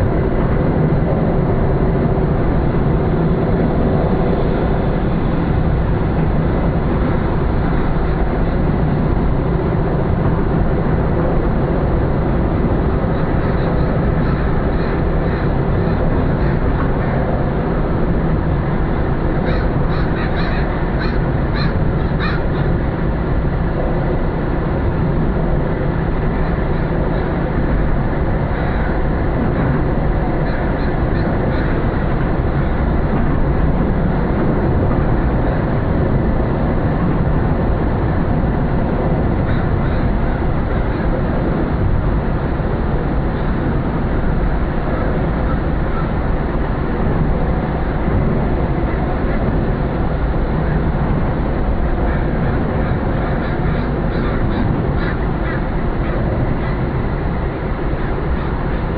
vancouver, under lions bridge
under the bridge, traffic passing an resonating in the construction, seagulls and a distant water plane
soundmap international
social ambiences/ listen to the people - in & outdoor nearfield recordings